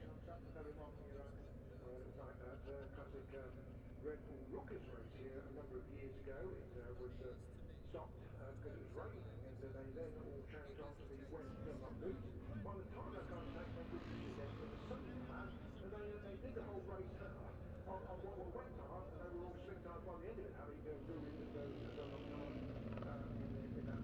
Silverstone Circuit, Towcester, UK - British Motorcycle Grand Prix 2018 ... moto three ...
British Motorcycle Grand Prix ... moto three ... free practice two ... lavalier mics clipped to a sandwich box ...
24 August 2018